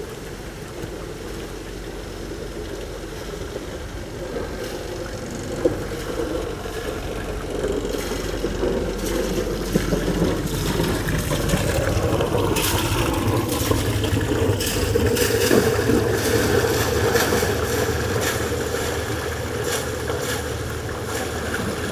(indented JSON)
{
  "title": "La Louvière, Belgium - Lift for boat",
  "date": "2018-08-15 11:50:00",
  "description": "This is a lift for boats. It's working only with water and nothing with engines. Here, it's a walk around the lift, from the bottom to the top, a small boat is ascending the canal. The boat is called Ninenix and have no IMO number. Very windy day, bad weather and curious span effects because lifts are moving very huge quantities of moving water.",
  "latitude": "50.49",
  "longitude": "4.18",
  "altitude": "106",
  "timezone": "GMT+1"
}